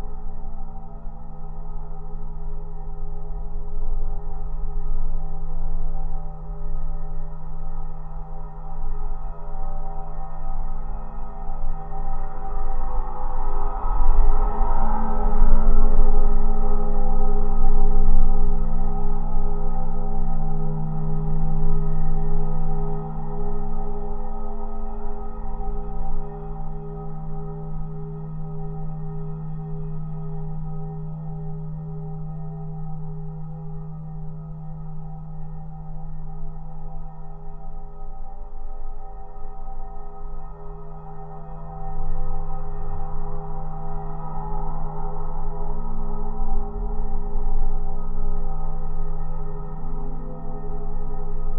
Daugavpils, Latvia, tram lines wires pole
new LOM geophone attached to tram line's wire pole